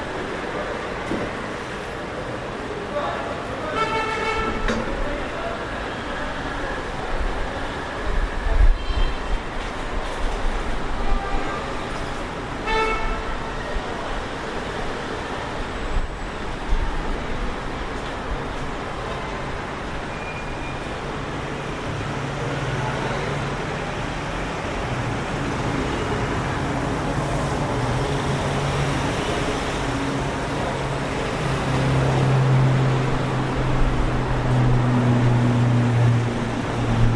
to stand at the balcony in Naples, TNT squat - March 2005
Naples, Italy